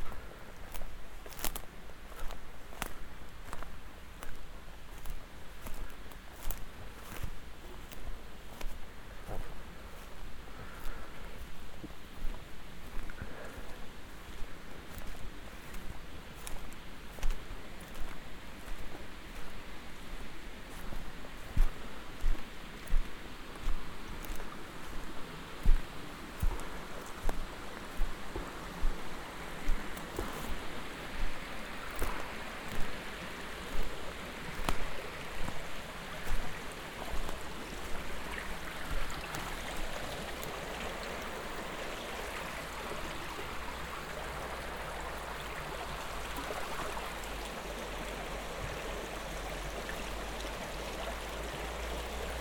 grube louise, walk thru high grass to small river
daytime walk thru high grass and dry branches to a small river
soundmap nrw: social ambiences/ listen to the people - in & outdoor nearfield recordings